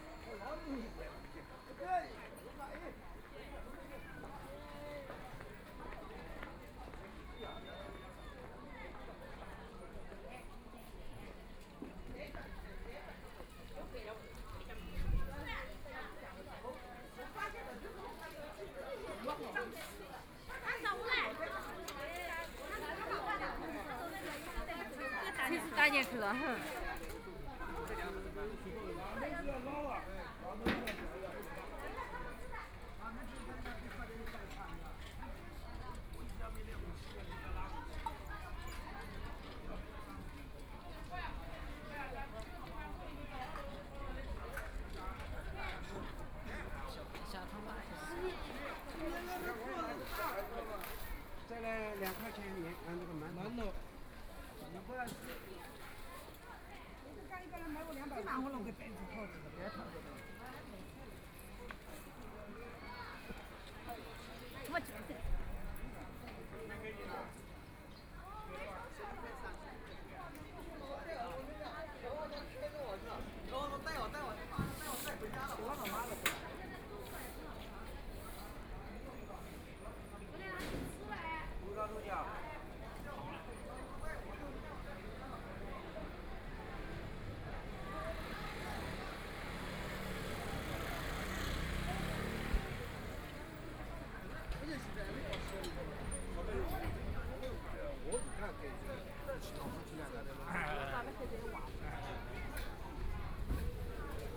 Zhaozhou Road, Shanghai - Walking on the street
Walking through the old neighborhoods, Walking on the street, About to be completely demolished the old community, Binaural recordings, Zoom H6+ Soundman OKM II